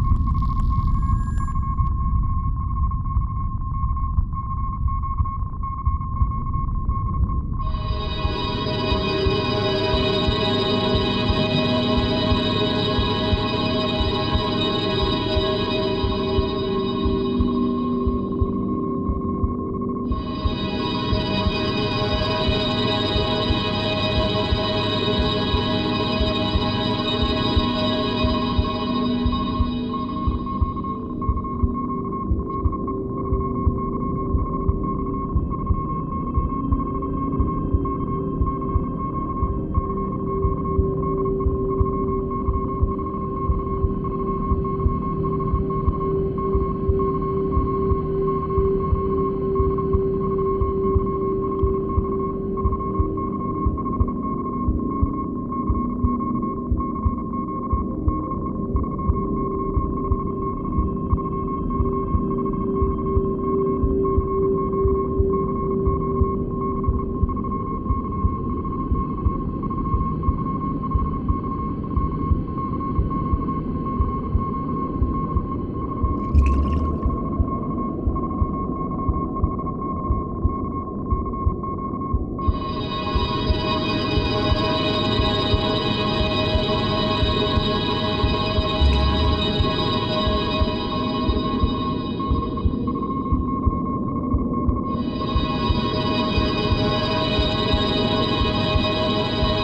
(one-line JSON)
{"title": "boxberg, transnaturale, installation, klangplateau - boxberg o/l, installation klangtableau recording 02", "date": "2009-11-26 20:48:00", "description": "long recording of the sound lab work during the transnaturale 2009 - here: water ambience based on local field recordings", "latitude": "51.40", "longitude": "14.57", "altitude": "133", "timezone": "Europe/Berlin"}